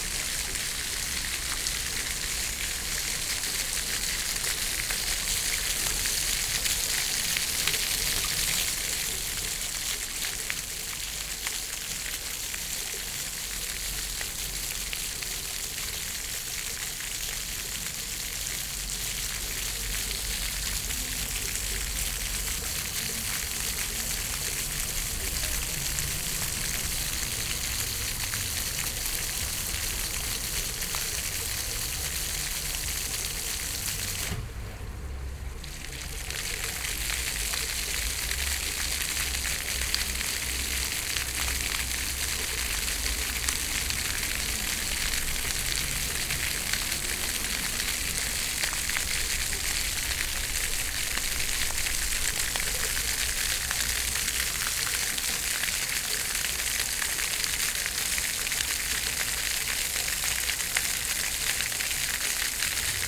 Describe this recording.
fointain at Neukölln ship canal, property of nearby hotel Estrel. Sonic exploration of areas affected by the planned federal motorway A100, Berlin. (Sony PCM D50, DPA4060)